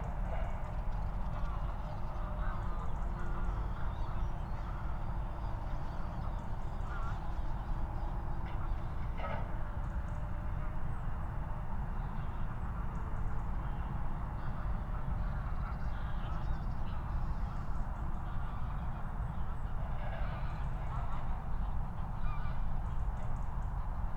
Moorlinse, Berlin Buch - near the pond, ambience
08:19 Moorlinse, Berlin Buch